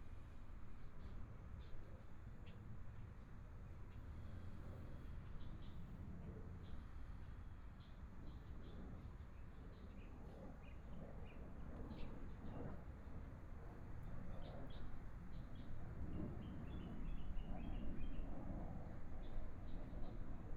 Taitung Forest Park, Taiwan - Fighter flight traveling through
Fighter flight traveling through, Binaural recordings, Zoom H4n+ Soundman OKM II ( SoundMap20140117- 7)